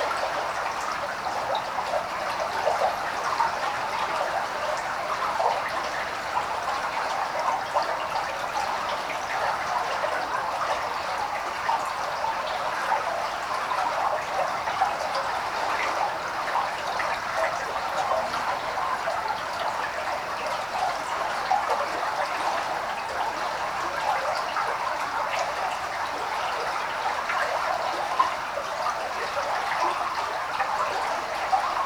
water from the lake flow into tube
Lithuania, 23 November 2011, ~13:00